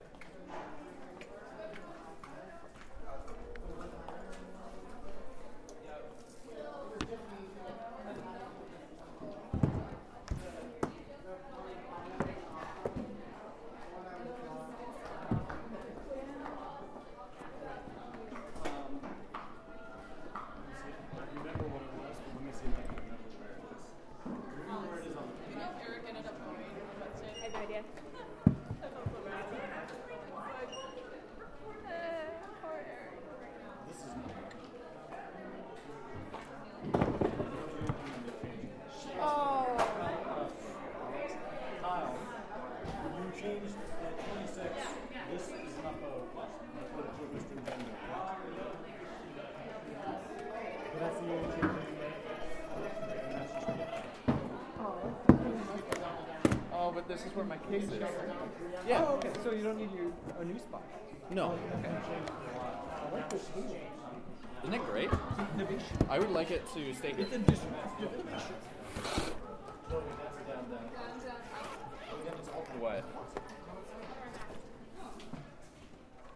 Appleton, WI, USA - After Orchestra
The sounds of the Conservatory basement after Orchestra rehearsal. Listen for the sounds of cases shutting (especially near the recorder), and notice how it gradually gets louder over time as more people descend from the Chapel to the basement.
12 November 2015